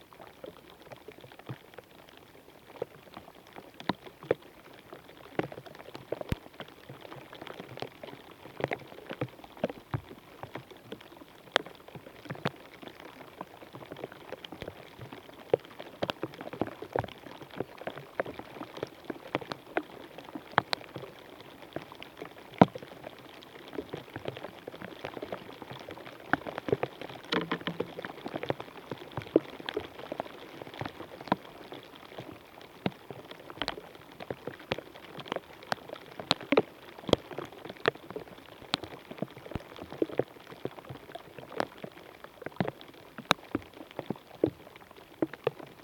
Urbanização Vila de Alva, Cantanhede, Portugal - Rain falling on a dead tree trunk
Sound of rain falling on a dead tree trunk captured with a contact microphone.
Coimbra, Portugal, 22 April 2022